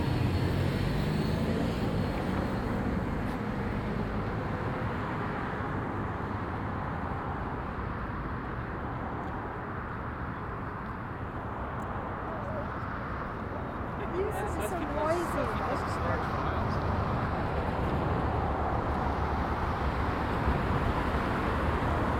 Downtown Commercial, Calgary, AB, Canada - street noise